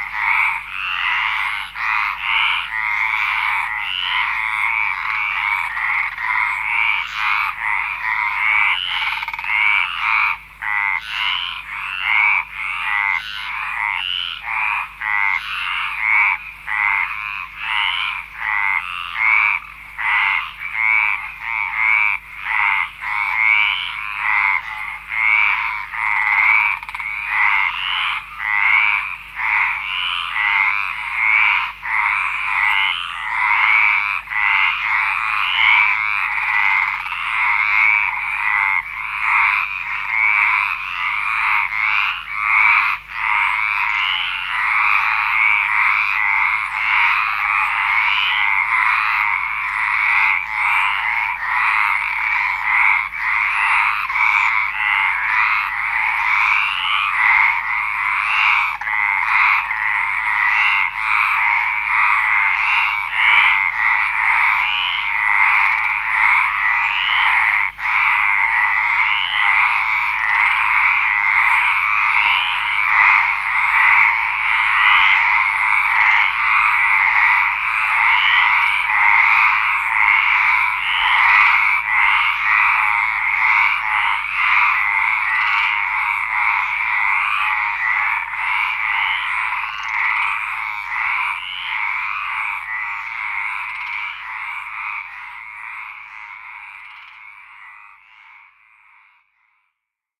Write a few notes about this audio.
A recording made on the way home from the local bar. SonyWM D6 C cassette recorder and Sony ECMS 907